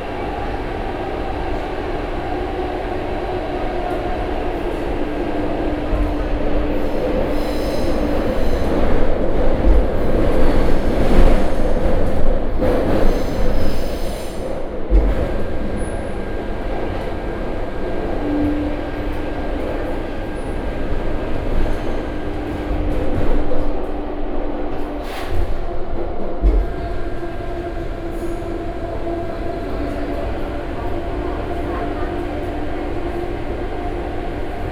Xiaobitan Branch Line (Taipei Metro), Zoom H4n+ Soundman OKM II